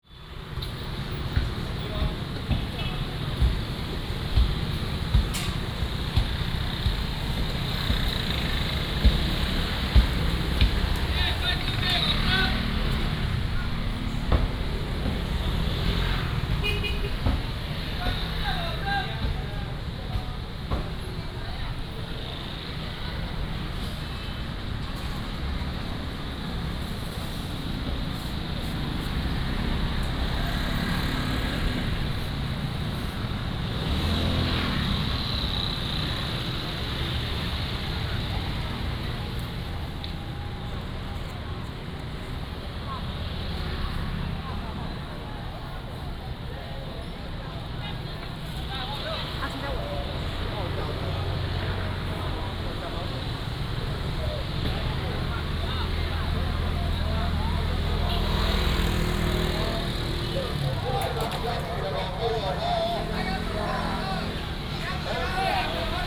Bo’ai Rd., Yuanlin City - Walk through the market
Walk through the market, Traffic sound, Selling voice
Changhua County, Taiwan, 25 January 2017, 08:48